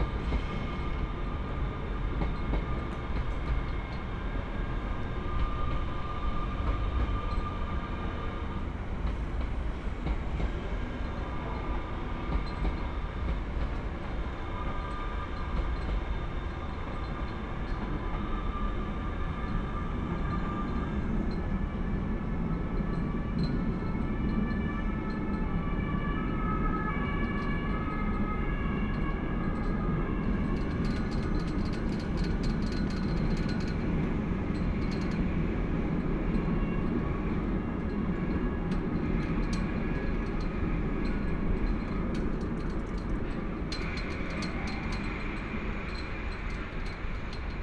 Lanxess Arena, Deutz, Köln - flags and trains
platform above track bed, flags clinking in the wind, trains passing
(tech: Olympus LS5 + Primo EM172 binaural)
Cologne, Germany, May 2012